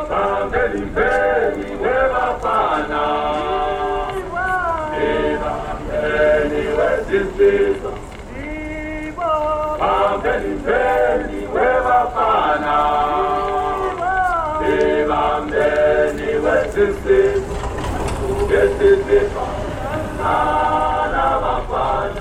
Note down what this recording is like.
A stall in the middle of the road selling memorabilia; with their own generator and amp system, they often “broadcast” suitably patriotic or revolutionary music into the air around the memorial… and sometimes more than just music… (mobile phone recording ), archived at: